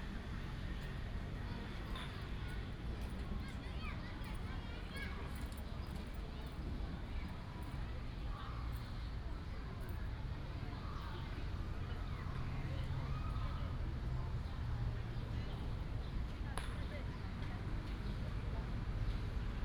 Wenzhou Park, Taoyuan Dist. - in the Park
Children's play area, Croquet, birds sound, traffic sound
Taoyuan City, Taiwan, 18 July 2017, 16:34